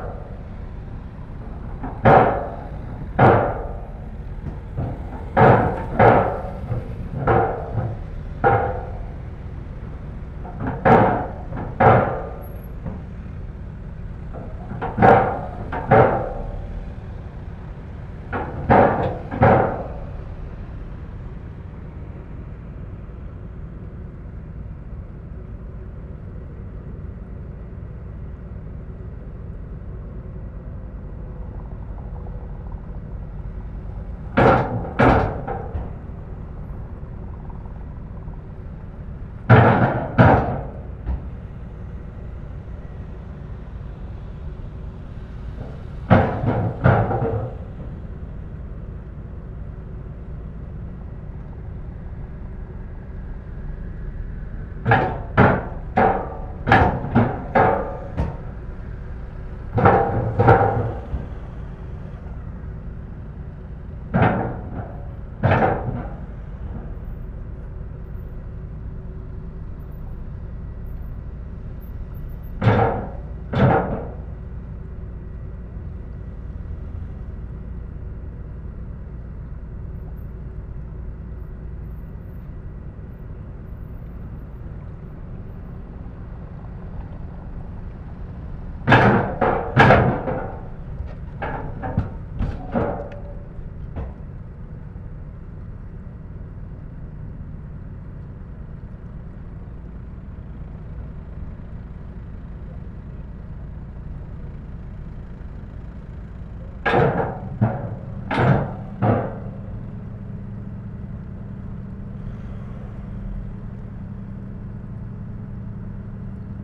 Sahurs, France - Sahurs ferry
Recording of Sahurs - La Bouille ferry, charging cars in aim to go to La Bouille, from the Seine bank.
18 September 2016